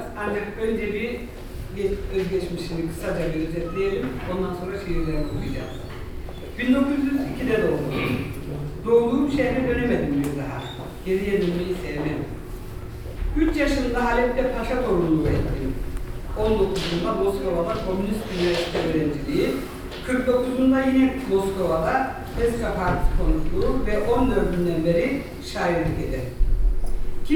An evening of poetry and music at the Alevi Cultural Centre… as we slip in, a song accompanied on the Sas, then a poem in Turkish and German: Nazim Hikmet’s “Curriculum Vitae”… it’s almost the end of the event; the mics are “playing up”; adding an eerie effect to “Nazim’s voice” resounding from the lyrics…
Ein Lyrikabend im Alevitischen Kulturzentrum… ein Lied begleitet auf der Sas; dann ein Gedicht auf Türkisch und Deutsch: Nazim Hikmet’s “Lebenslauf”… die Veranstaltung geht schon beinahe dem Ende entgegen, und die Microphone “verabschieden sich”… “Nazim’s Stimme” hallt aus seinen Versen unheimlich wieder…
Hamm, Germany, 12 September 2014, 19:45